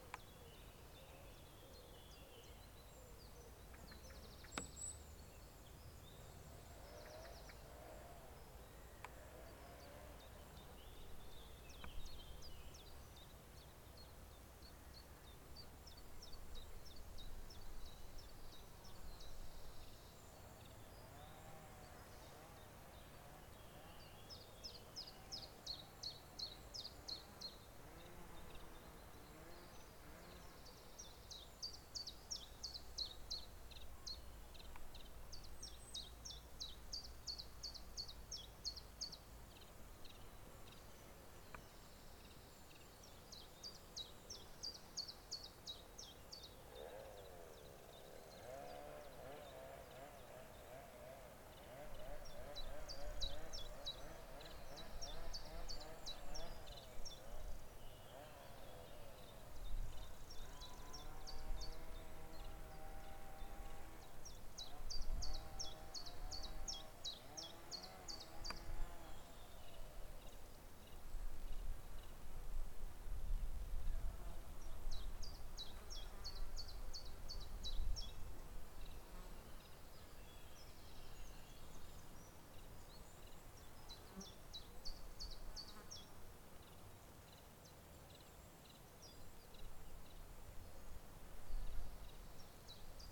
Haldon Forest, Exeter, UK - Under pylons Haldon Forest
This recording was made using a Zoom H4N. The recorder was positioned on the track on the butterfly walk in Haldon Forest Park under the electricity pylons. This area has the vegetation under the pylons cleared regularly this provides important habitat for butterflies such as the rare pearl-bordered fritillary. The pylons pass through the landscape and the slight audible buzz that they emit can be heard on the recording. A chainsaw is being used in the forest which can also be heard. This recording is part of a series of recordings that will be taken across the landscape, Devon Wildland, to highlight the soundscape that wildlife experience and highlight any potential soundscape barriers that may effect connectivity for wildlife.